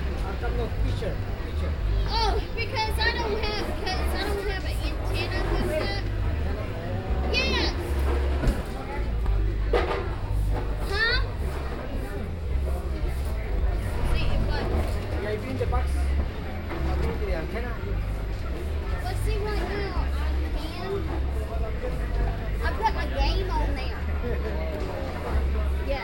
USA, Texas, Austin, Austin Country Flea Market, Flea Market, redneck, Tutti frutti, Guitarist, Binaural
TX, USA, 13 November 2011, ~17:00